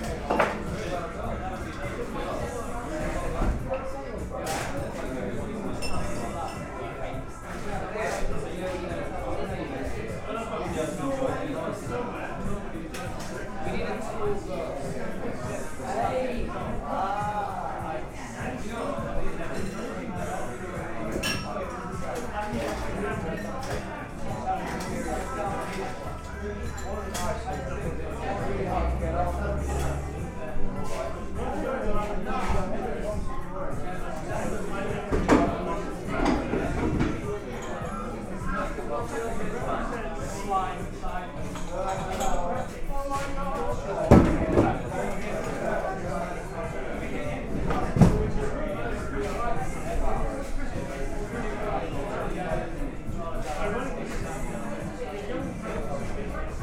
The Dorset, Cafe, Brighton - The Dorset, Cafe - Friday Afternoon
Friday afternoon, The Dorset Cafe, Brighton
5 February 2010, ~19:00